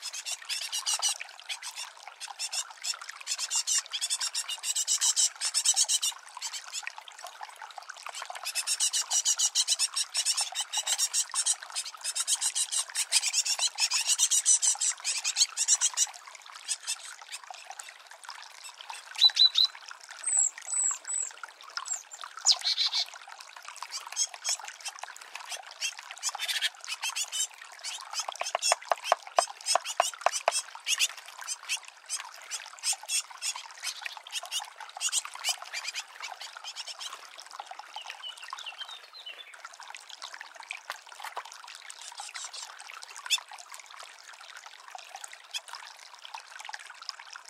Lokovec, Čepovan, Slovenia - Bird Sound Recordings (Heavy and Emotional Male Discussion)
Sound recordings of different species of birds. I caught them just in the moment, while they were having emotionally strong discussion, thanks to a lady, which had just landed to a tree, where there was a birdhouse and inside of it was nicely filled with bird food.
TASCAM DR-100 MKIII
Micro USI
The recorder and microphones were nicely placed on the window sill so that birds could be recorded at the closest possible distance.
Slovenija, 10 July 2020